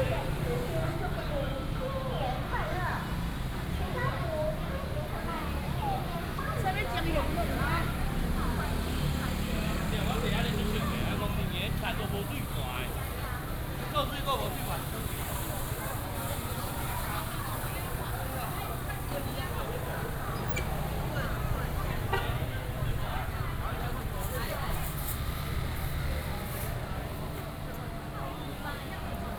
Zhongshan Rd., Houli Dist. - Walking in the traditional market
Walking in the traditional market